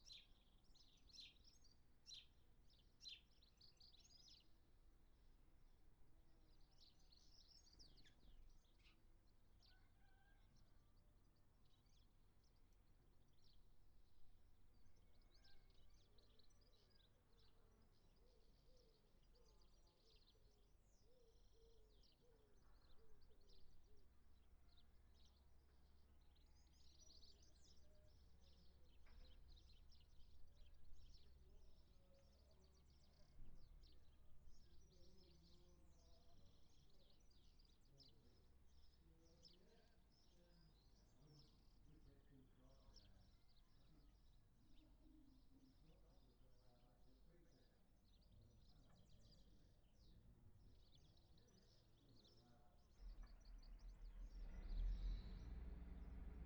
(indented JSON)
{
  "title": "Unnamed Road, Malton, UK - down to the doctors ...",
  "date": "2022-06-16 14:10:00",
  "description": "down to the doctors ... to get a prescription ... on the m'bike ... xlr sass on garage roof to zoom h5 ... always wanted to do this ... real time for there and back ...",
  "latitude": "54.12",
  "longitude": "-0.54",
  "altitude": "76",
  "timezone": "Europe/London"
}